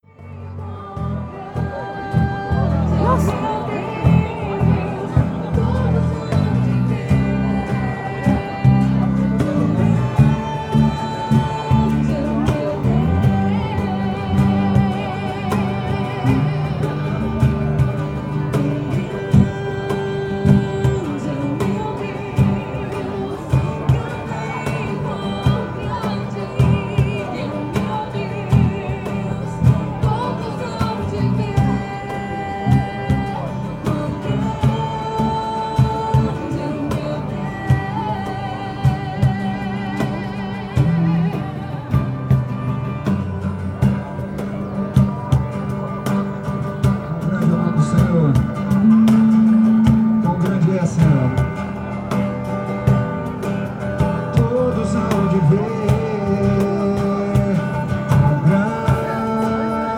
Calçadão de Londrina: Banda: guardas municipais - Banda: guardas municipais / Band: municipal guards
Panorama sonoro: apresentação de uma banda de músicas gospel formada por guardas municipais de Londrina, sábado pela manhã, na Praça Gabriel Martins, em homenagem ao aniversário da guarda. A banda utilizava instrumentos musicais de corda, percussão e vozes amplificados por microfones conectados às caixas de som. Um dos integrantes traduzia as letras das músicas para libras. Ao entorno, várias pessoas acompanhavam a apresentação, cantando junto e aplaudindo ao fim de cada música. De uma loja localizada em frente ao local de apresentação eram emitidas propagandas, músicas e, por vezes locução.
Sound panorama: presentation of a band of gospel songs formed by municipal guards of Londrina, Saturday morning, in Praça Gabriel Martins, in honor of the anniversary of the guard. The band used string musical instruments, percussion and voices amplified by microphones connected to the speakers. One of the members translated the lyrics of the songs into pounds.
- Centro, Londrina - PR, Brazil, 8 July 2017